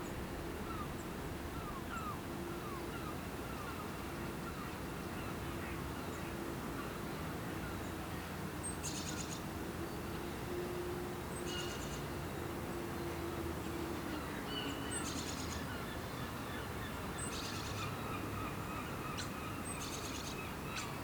Scarborough, UK - Summer, St Mary's Church, Scarborough, UK
Binaural field recording at St Mary's Church, Scarborough, UK. Slight wind noise. Birds, seagulls, church bells
July 2012